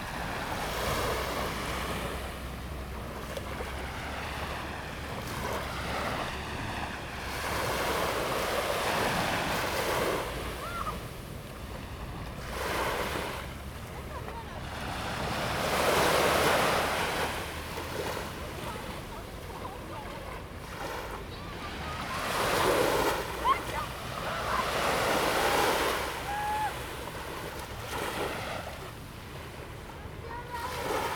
萬里里, Wanli District, New Taipei City - Sandy beach
sound of the waves, At the beach
Zoom H2n MS+XY +Sptial Audio
4 August 2016, 10:55